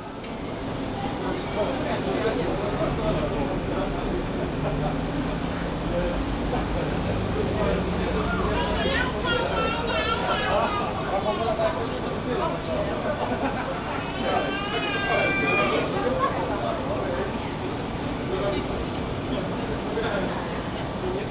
waiting for the shuttle to pick the passengers to the plane. talks, hiss of the nearby plane